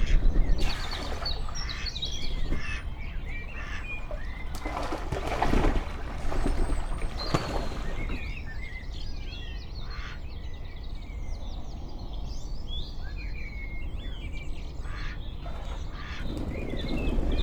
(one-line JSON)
{"title": "at My Garden Pond, Malvern, Worcestershire, UK - 6am Duck Pond 11-4-22.", "date": "2022-04-11 06:03:00", "description": "Mallard sparring and mating. I like the near and distant sounds and the movement of focus left and right.\nMixPre 6 II with 2 Sennheiser MKH 8020 on a table top 1 metre from the edge of the water.", "latitude": "52.08", "longitude": "-2.33", "altitude": "122", "timezone": "Europe/London"}